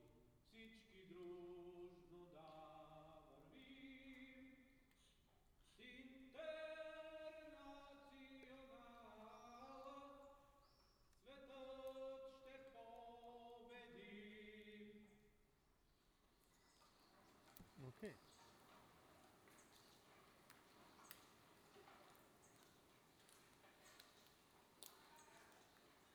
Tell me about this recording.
Stephan A. Shtereff is singing the international on the one side of the arena, the microphones are on the other side, he stands before Marx, Engels and Lenin (or what is left of their mosaics), the microphone in front of the leaders of the Bulgarian Communist Party (or what is left of them). After the song the noises of this ruin of socialism become audible again...